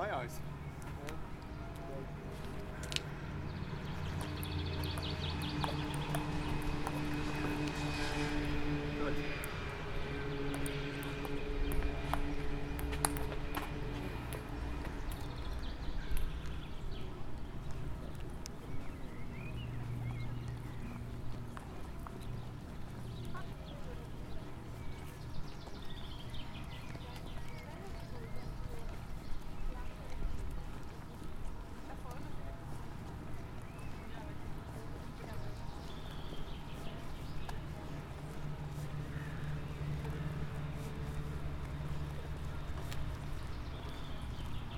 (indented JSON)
{
  "title": "Eintritt ins Marzilibad",
  "date": "2011-06-10 13:45:00",
  "description": "Marzilibad, Eintritt gratis, jedoch Kästchengebühr, Bärndütsch der langsamste Dialakt der Schweiz",
  "latitude": "46.94",
  "longitude": "7.44",
  "altitude": "507",
  "timezone": "Europe/Zurich"
}